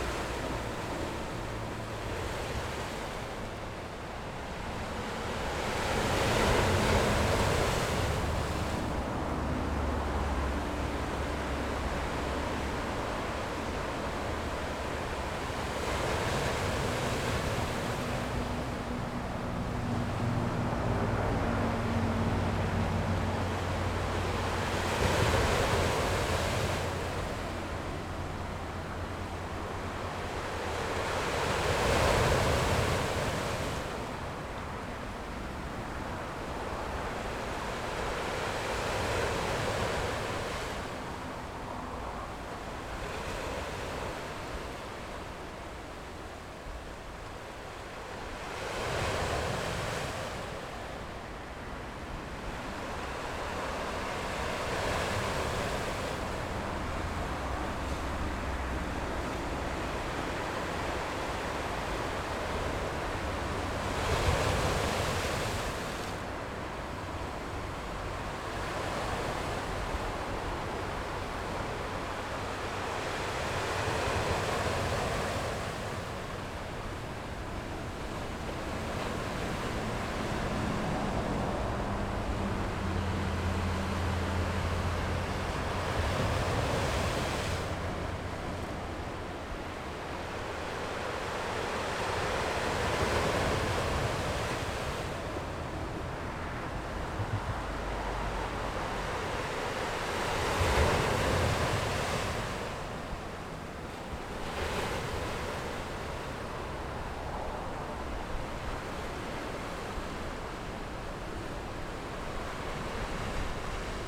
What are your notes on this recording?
Sound of the waves, At the seaside, Standing on the embankment, Traffic Sound, Zoom H6 XY +Rode NT4